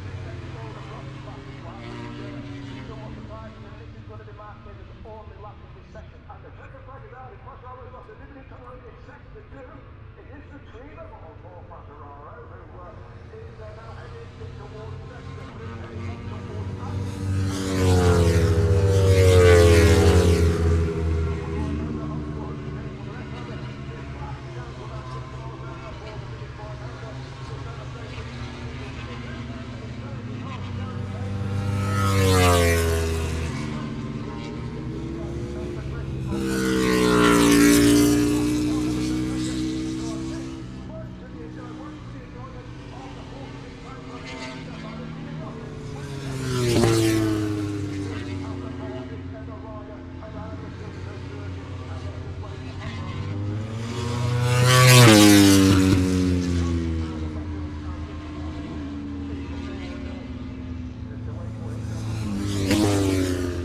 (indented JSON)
{
  "title": "Silverstone Circuit, Towcester, UK - british motorcycle grand prix 2019 ... moto grand prix ... fp3 contd ...",
  "date": "2019-08-24 10:35:00",
  "description": "british motorcycle grand prix 2019 ... moto grand prix ... free practice four contd ... maggotts ... lavaliers clipped to bag ... background noise ...",
  "latitude": "52.07",
  "longitude": "-1.01",
  "altitude": "156",
  "timezone": "Europe/London"
}